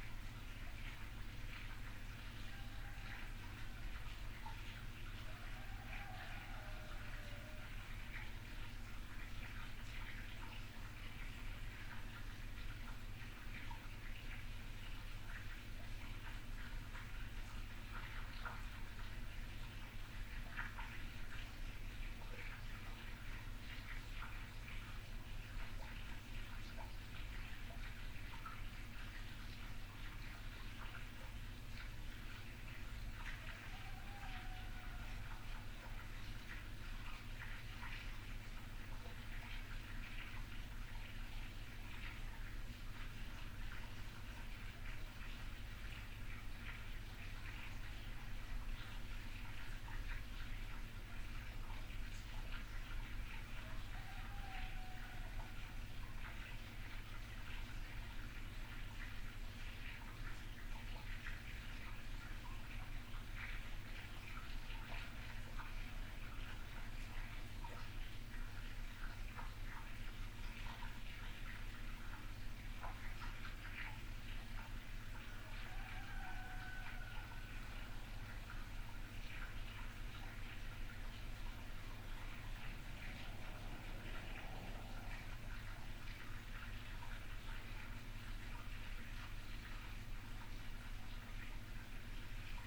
Lachania, Rhodos, Griechenland - Lahania, Rhodos, at night
Soundscape of Lahania village at 04:30 in the morning. Still dark, no sign of the dawn. Calm, no wind. Every now and then distant dogs, then all at once a distant aeroplane, cocks start crowing, a Scops Owl and some dogs join in. After a while all calms down again. Binaural recording. Artificial head microphone set up on the terasse. Microphone facing south east. Recorded with a Sound Devices 702 field recorder and a modified Crown - SASS setup incorporating two Sennheiser mkh 20 microphones.
Περιφέρεια Νοτίου Αιγαίου, Αποκεντρωμένη Διοίκηση Αιγαίου, Ελλάς, 23 October 2021